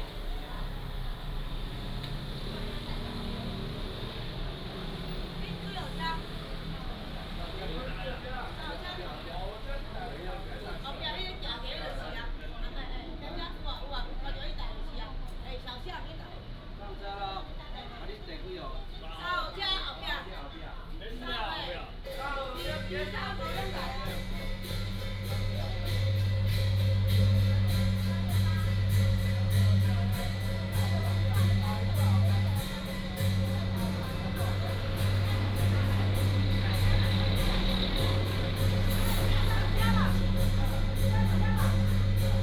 Pilgrimage group, Traffic sound